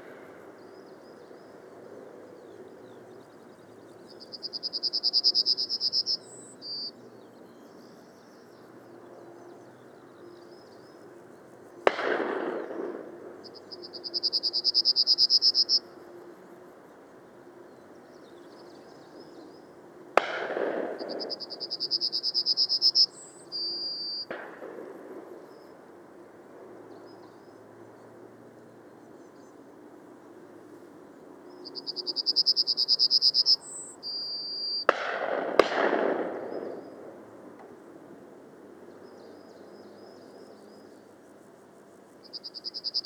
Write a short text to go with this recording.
I went to check out this site as I had been told it had a good Nightjar population. I was greeted by a Marsh Harrier and a lovely male Yellowhammer. The recording also has a Skylark and Linnet in the background. Recorded on my Sony M10 placed directly in a parabolic reflector using the internal mics.